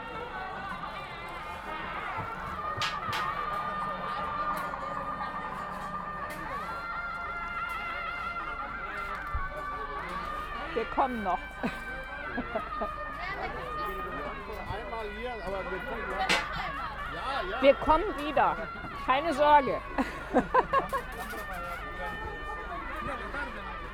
parking lot next to the temple, Hamm, Germany - Strolling the festival bazar
Strolling among the stalls of the bazar. Still a lot of setting up going on in and around the stalls. Sounds of the bazar are mixing with the sounds of ongoing prayers and offerings from inside the temple. Day before the main temple fest.